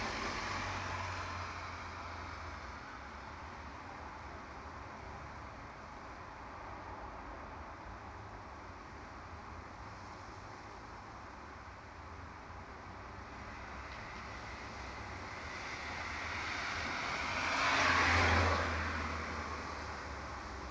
Entrance to cash and carry
Hertfordshire, UK